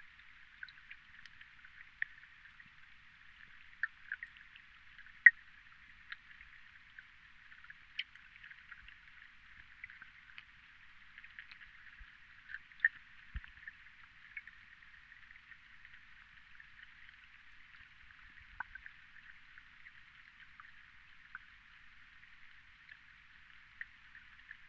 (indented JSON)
{
  "title": "Medeniai, Lithuania. silent underwater",
  "date": "2018-07-08 17:10:00",
  "description": "hydrophones. always wanted to put hydros to this pond...surprise - almost no bug life underwater",
  "latitude": "55.49",
  "longitude": "25.69",
  "altitude": "167",
  "timezone": "Europe/Vilnius"
}